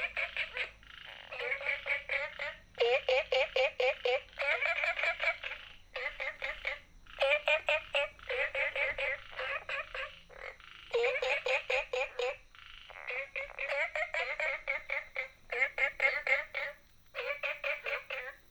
{"title": "綠屋民宿, 桃米里 Taiwan - small Ecological pool", "date": "2015-06-11 23:07:00", "description": "Frogs sound, small Ecological pool", "latitude": "23.94", "longitude": "120.92", "altitude": "495", "timezone": "Asia/Taipei"}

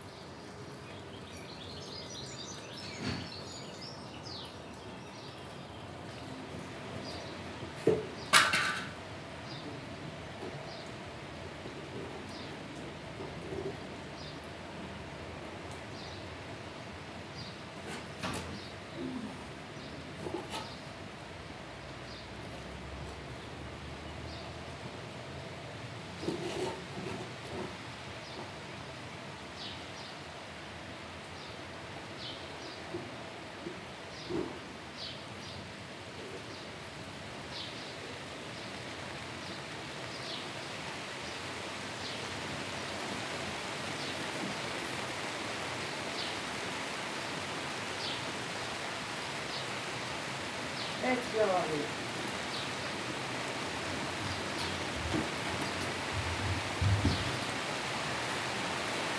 {"title": "Tivoli, Colle Castello, Summer Storm", "date": "2011-09-15 14:56:00", "description": "Un temporale passeggero, Fiorella, Franco e Marcello...\nSummer storm, Fiorella, Franco and Marcello...", "latitude": "41.95", "longitude": "12.84", "altitude": "286", "timezone": "Europe/Rome"}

